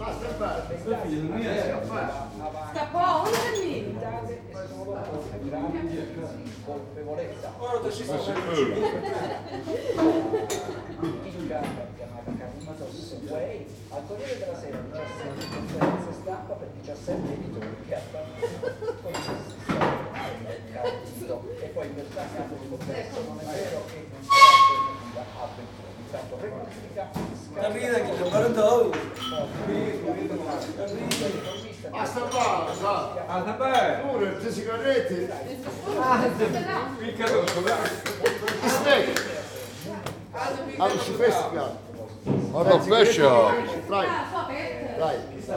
koeln, luebecker str, italian bar - closing time
31.01.2009 1:50, trattoria celentano, nice italian restaurant bar, it's late, closing time, voices
Köln, Deutschland, 31 January 2009